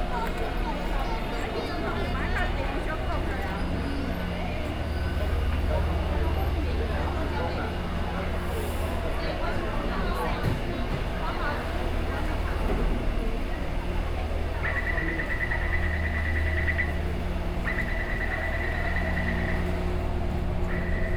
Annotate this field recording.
Enter the MRT Station, Sony PCM D50 + Soundman OKM II